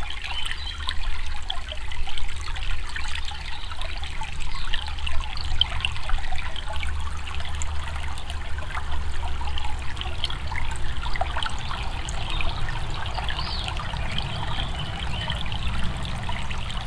{"title": "näideswald, forest, small stream", "date": "2011-07-12 14:27:00", "description": "In a small forest valley - a small stream. The sound of the bell like murmuring water under trees. In the distance passing traffic and more close up some flying insects.\nNäidserwald, Wald, kleiner Bach\nIn einem kleinen Waldtal ein kleiner Bach. Das Geräusch der Glocke wie murmelndes Wasser unter Bäumen. In der Ferne vorbeifahrender Verkehr und weiter vorne fliegende Insekten.\nNäidserwald, forêt, petit ruisseau\nUn petit ruisseau dans une petite vallée en forêt. Le son de l’eau murmurant sous les arbres ; on dirait des cloches. Dans le lointain, le trafic routier et, plus près, des insectes qui volent.\nProject - Klangraum Our - topographic field recordings, sound objects and social ambiences", "latitude": "50.02", "longitude": "6.05", "altitude": "382", "timezone": "Europe/Luxembourg"}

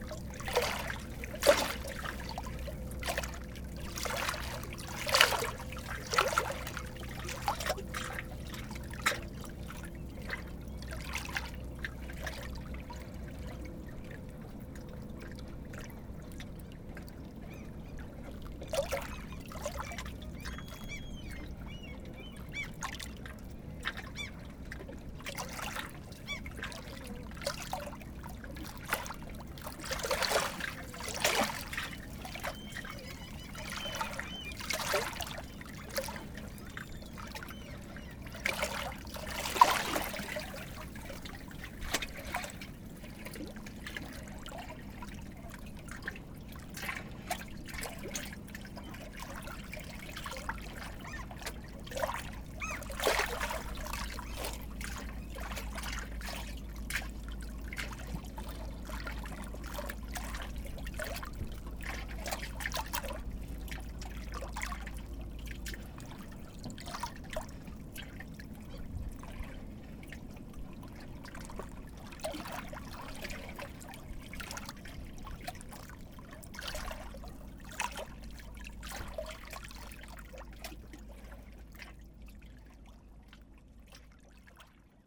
{"title": "Malmö, Sweden - The sea", "date": "2019-04-17 09:00:00", "description": "Simple sound of the sea in Malmö, near the Turning Torso tower.", "latitude": "55.62", "longitude": "12.97", "timezone": "Europe/Stockholm"}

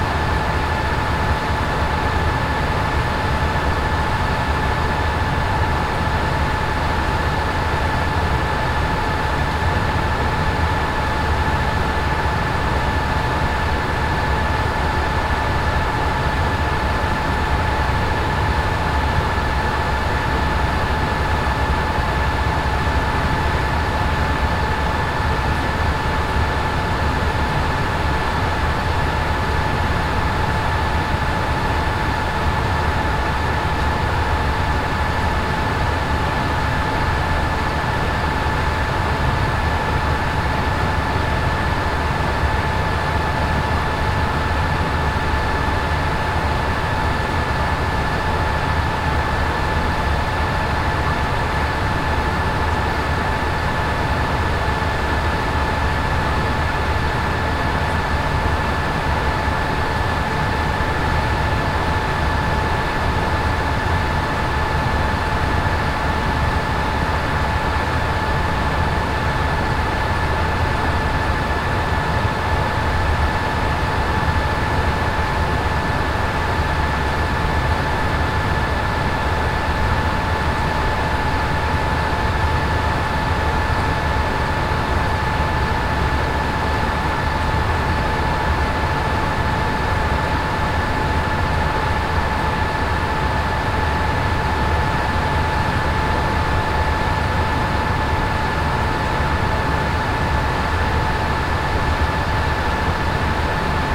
{"title": "Moulins, Allee des soupirs, WWTP by night 2", "date": "2011-05-23 00:42:00", "description": "France, Auvergne, WWTP, night, binaural", "latitude": "46.58", "longitude": "3.31", "altitude": "206", "timezone": "Europe/Paris"}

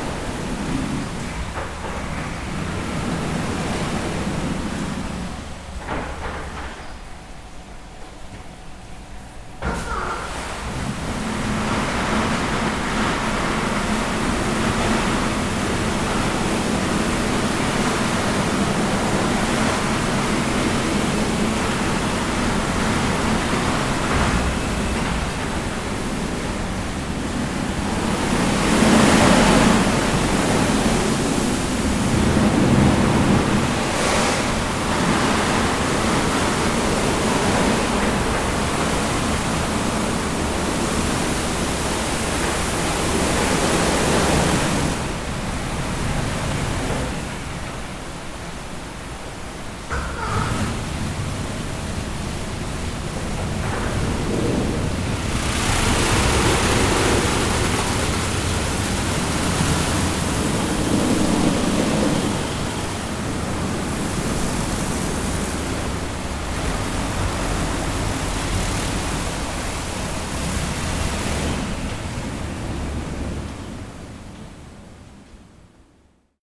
{"title": "Gėlių g., Ringaudai, Lithuania - Carwash in action", "date": "2021-04-20 10:00:00", "description": "A petrol station carwash in action. Recorded with ZOOM H5.", "latitude": "54.89", "longitude": "23.80", "altitude": "83", "timezone": "Europe/Vilnius"}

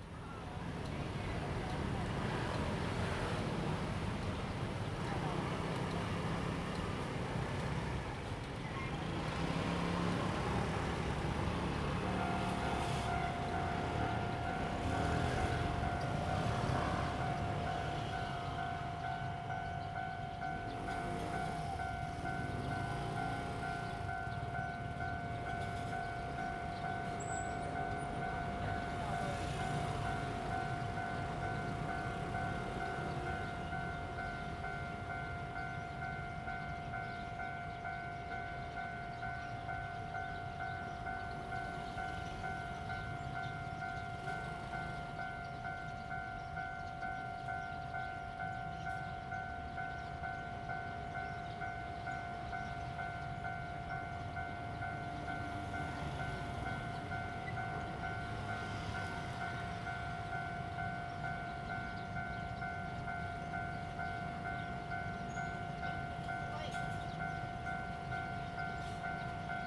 {"title": "Ln., Qingnian Rd., East Dist., Tainan City - In the railway level road", "date": "2017-01-31 14:09:00", "description": "In the railway level road, Traffic sound, Train traveling through\nZoom H2n MS+XY", "latitude": "22.99", "longitude": "120.21", "altitude": "24", "timezone": "GMT+1"}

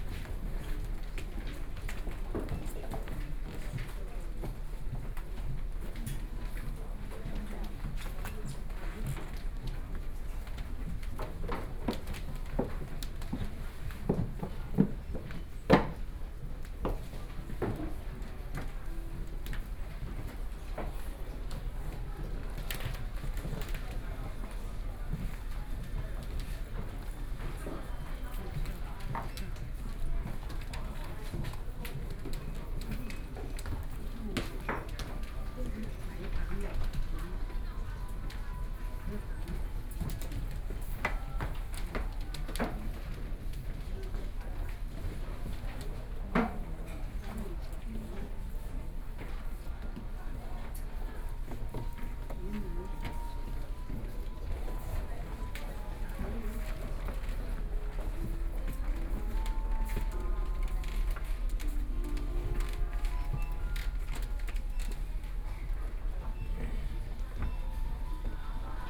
{"title": "Eslite Bookstore, Da’an Dist. - inside the bookstore", "date": "2012-11-02 20:46:00", "description": "Walking inside the bookstore\nBinaural recordings, Sony PCM D50", "latitude": "25.04", "longitude": "121.55", "altitude": "15", "timezone": "Asia/Taipei"}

